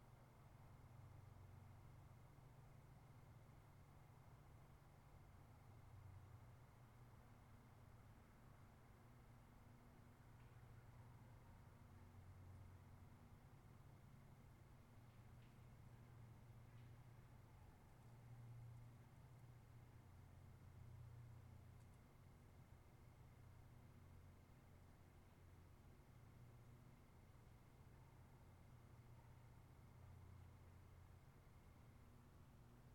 Field in Sandy - Outside Sandy/Elks Bugling
Empty field near dusk on a cool early fall day. Caught some elks running and bugling.
Sandy, OR, USA, 4 October 2018, ~17:00